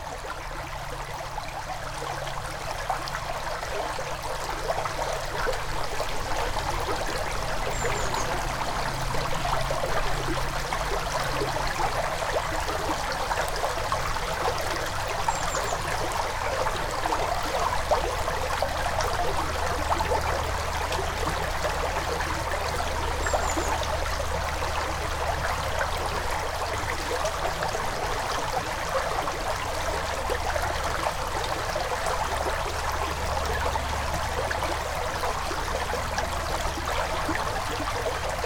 Genappe, Belgique - Ry d'Hez river
The bucolic Ry d'Hez river, flowing quietly and recorded from a small pedestrian bridge.